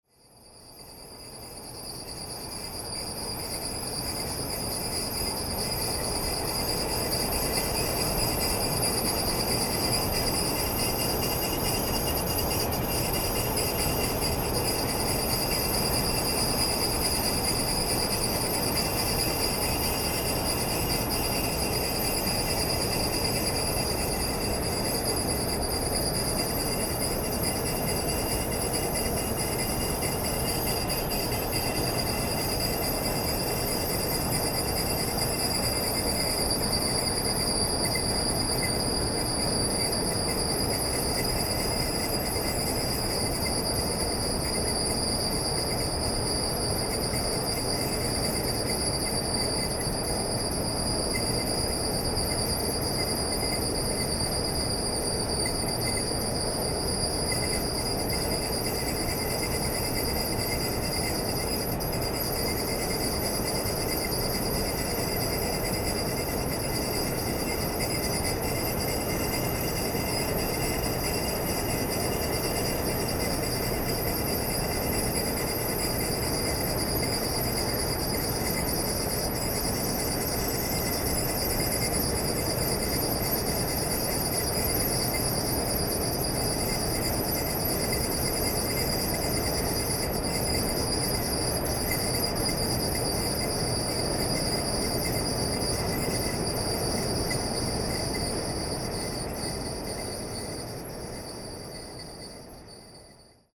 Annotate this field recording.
Is this a Merzbow concert ? No no, missed ! This is an old rusty conveyor.